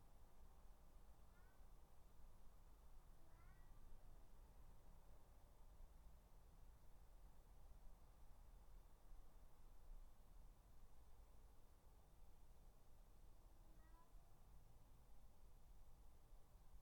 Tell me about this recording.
3 minute recording of my back garden recorded on a Yamaha Pocketrak